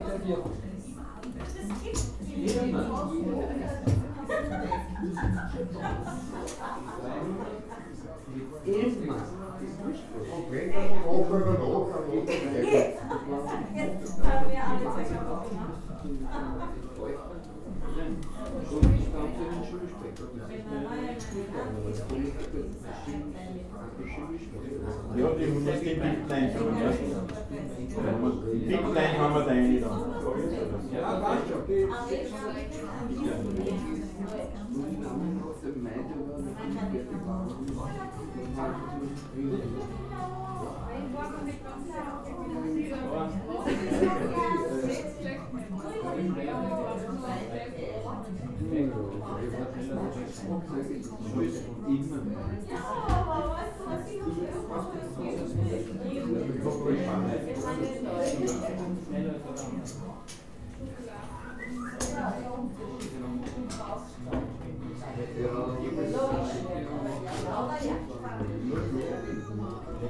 Kleinmünchen, Linz, Österreich - café silvia
café silvia, linz-kleinmünchen